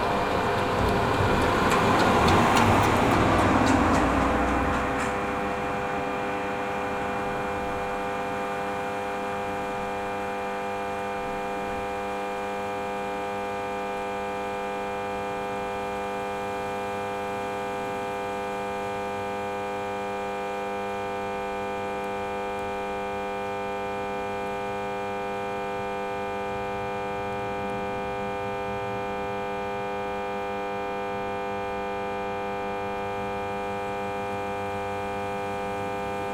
Into a small village, there's a power station placed into the bus stop. I was heckled by the by noise of a so small machine. What a nuisance...
11 August 2017, 16:15